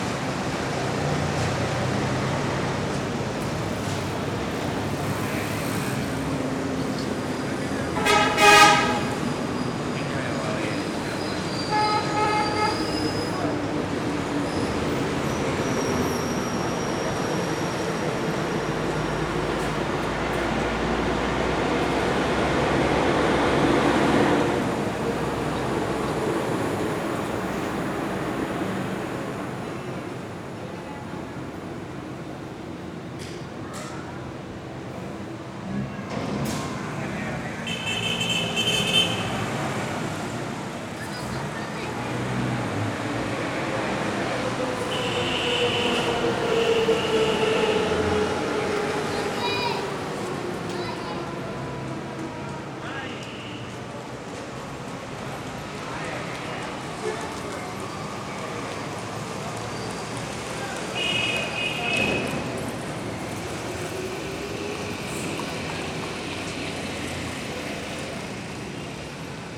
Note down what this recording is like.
traffic, busses, trucks, motorbikes, horse carts